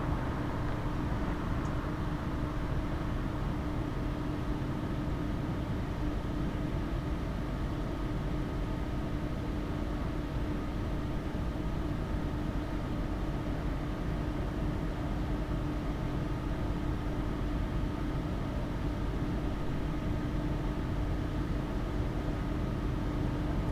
Ici il n'y a que des sons anthropiques en cette saison, ventilation de l'Espace Montagne, parachutistes, véhicules en circulation.